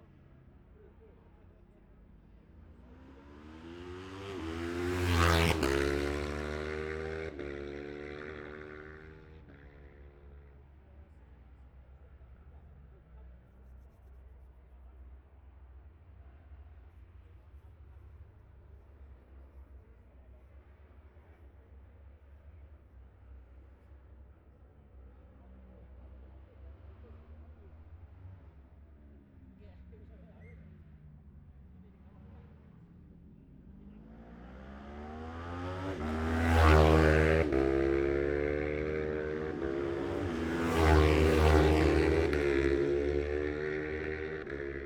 bob smith spring cup ... twins group A qualifying ... luhd pm-01 mics to zoom h5 ...